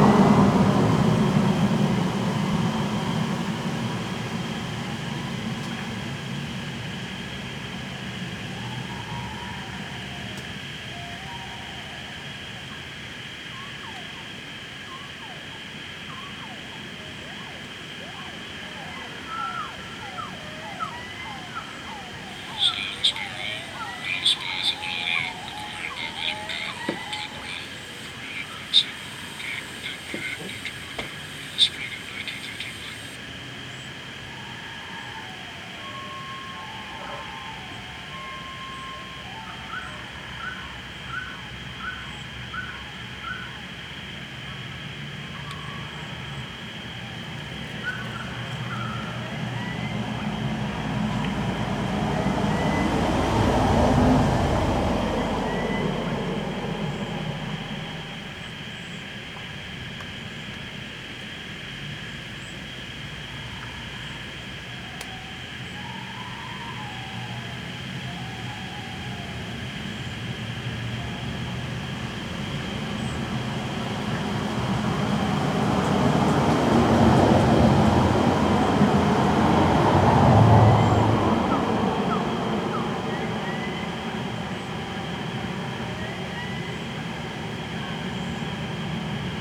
Living Arts, Kagawong, ON, Canada - Octet - outdoor sound installation
Visually, the piece presents as eight SM58 microphones hanging from branches of a tree, in this case a cedar. The microphones are used 'backwards', as tiny speakers. The sounds heard are from the collection of William WH Gunn, early Canadian environmental sound recordist (provided courtesy of the Macaulay Library, Cornell University), and are all birdsongs recorded in various Ontario locations in 1951-52, including on Manitoulin Island. Periodically Gunn can be heard introducing a recording, and the recording follows. All the birdsongs are played back slowed down to 20% of their original speed.
Recorded with Zoom H2n placed under the tree.
Gore Bay, ON, Canada, 9 July 2016, 14:00